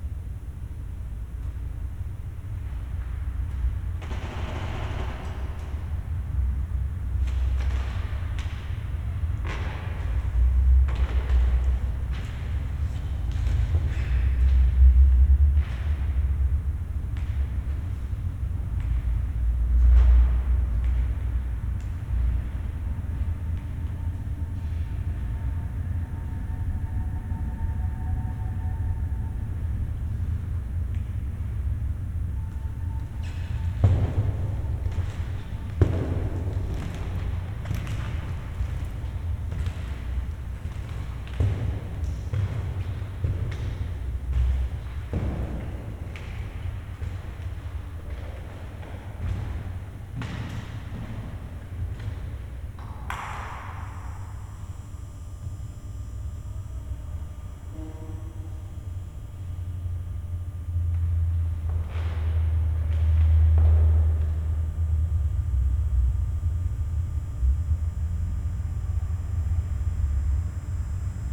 Colloredo-Mansfeld Palace Praha, Česká republika - In the Dancing Hall
The dance hall of the half-forgotten Baroque palace near Charles Bridge. It was built around 1735 for the Prince Vinzenz Paul Mansfeld. Sculptures on the portal and a fountain with a statue of Neptune in the courtyard were most likely made in Matiáš Braun’s workshop. In mid-19th century a neighbouring house was attached to the Palace and a passage was created on the right side of the main façade.